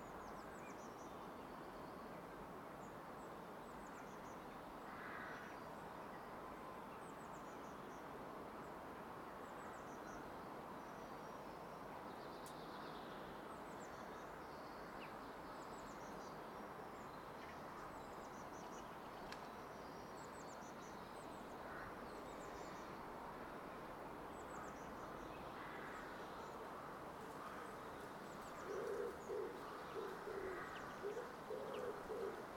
Sunday morning in a rather quiet neighbourhood, distant church bells ringing, singing birds (mainly blackbirds and pigeons), a neighbour at his trash can, a distant train passing by, a plane crossing high above; Tascam DR-100 MK III built-in uni-directional stereo microphones with furry wind screen
Kronshagen, Germany, 10 March 2019